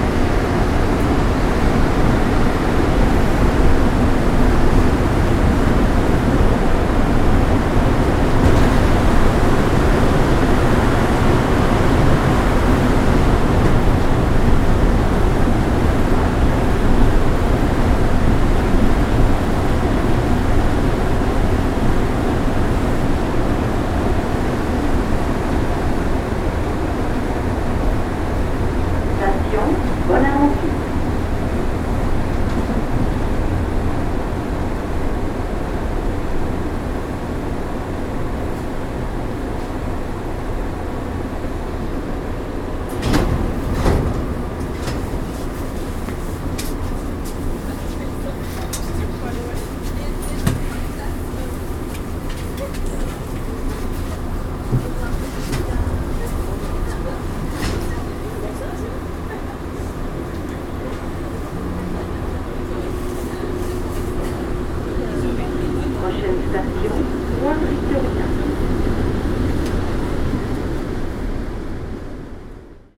Montreal: Lucien L`allier to Bonaventure - Lucien L`allier to Bonaventure
equipment used: Ipod Nano with Belkin TuneTalk
Getting on the metro and riding to Bonaventure